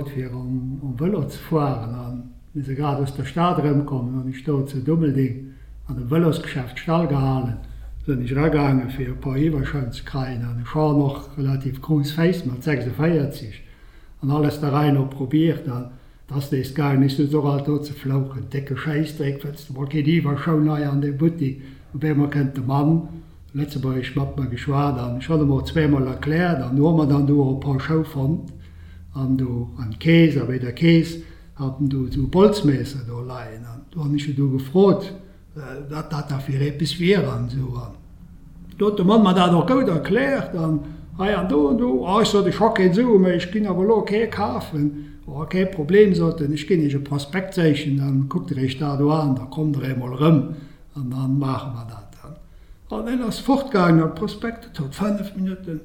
vianden, local dialect
A recording of the local Vianden dialect here spoken as an demonstration by Franz Klasen.
Stereo field indoor recording.
Vianden, lokaler Dialekt
Eine Aufnahme vom lokalen Viandener Dialekt, hier freundlicherweise vorgeführt und gesprochen in einer Demonstration von Franz Klasen. Stereophone Innenaufnahme.
Vianden, dialecte local
Un enregistrement du dialecte local de Vianden, aimablement présenté et prononcé par Franz Klasen. Enregistrement stéréo en intérieur.
Project - Klangraum Our - topographic field recordings, sound objects and social ambiences
Vianden, Luxembourg, 5 October 2011